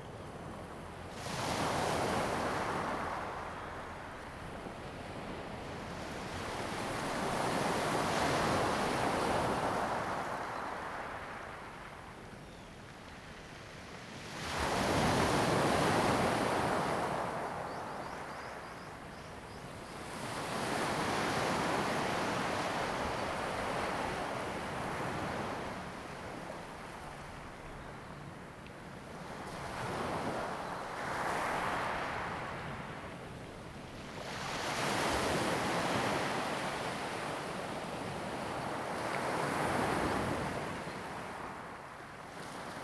Jiayo, Ponso no Tao - In the beach
In the beach, Sound of the waves
Zoom H2n MS +XY
Lanyu Township, Taitung County, Taiwan